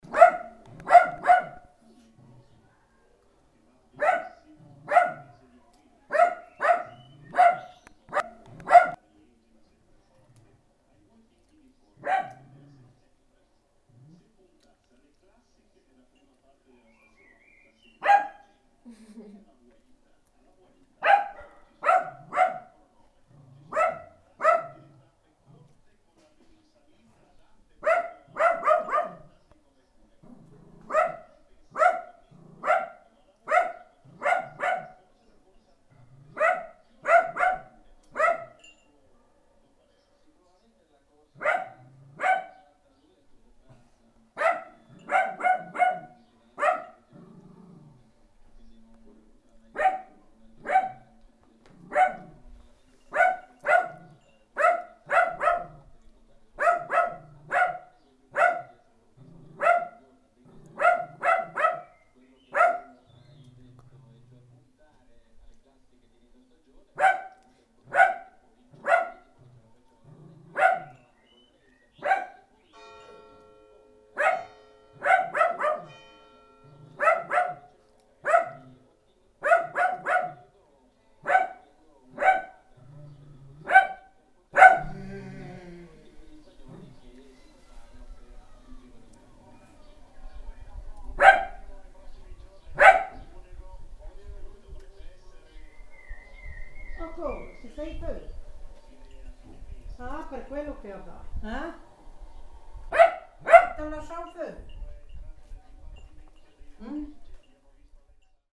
alto, via roma, dog, radio and bell
in a small street of the village, a dog endlessly barking, parallel a radio sport show, a human snoaring and the hour bell of the village. finally the female owner of the dog appears
soundmap international: social ambiences/ listen to the people in & outdoor topographic field recordings